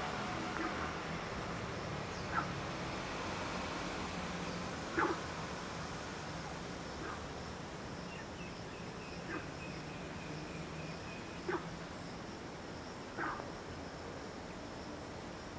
林口磚窯廠 - 周邊聲音
臺灣